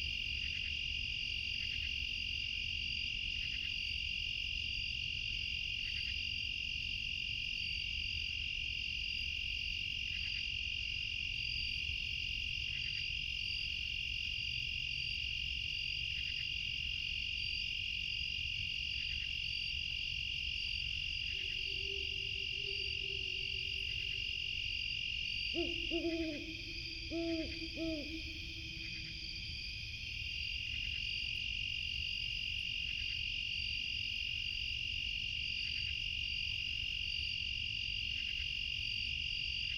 Edward G Bevan Fish and Wildlife Management Area, Millville, NJ, USA - owls and insects
great horned owls, insect drone
fostex fr2le, at3032 omni